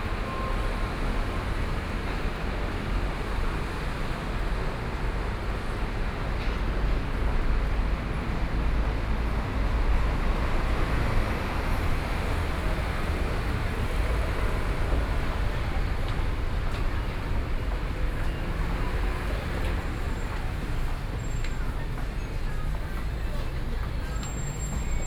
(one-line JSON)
{"title": "Zhuwei, New Taipei City - walking in the Street", "date": "2013-10-26 19:20:00", "description": "Traffic Noise, Garbage truck arrived at the sound, People walking in the street, Various businesses voices, Binaural recordings, Sony PCM D50 + Soundman OKM II", "latitude": "25.14", "longitude": "121.46", "altitude": "17", "timezone": "Asia/Taipei"}